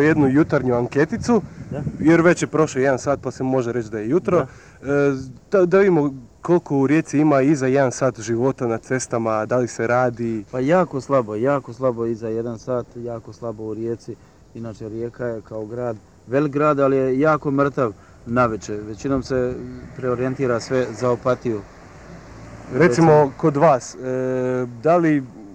Rijeka, Croatia, Archive - Radio 051 Interview, Taxi
Radio 051 interviews in the streets of Rijeka in 1994.
Interviews was recorded and conducted by Goggy Walker, cassette tape was digitising by Robert Merlak. Editing and location input by Damir Kustić.